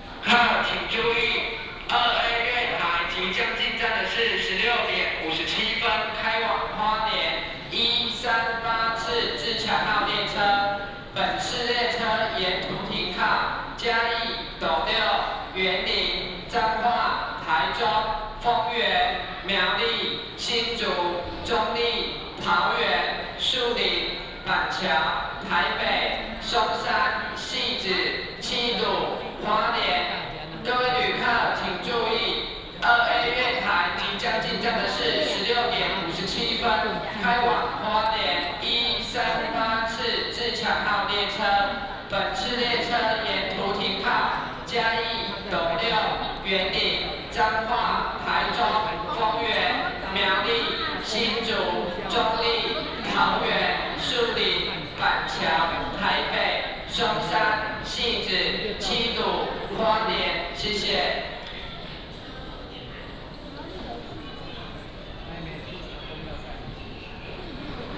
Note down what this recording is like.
In the station hall, Station information broadcast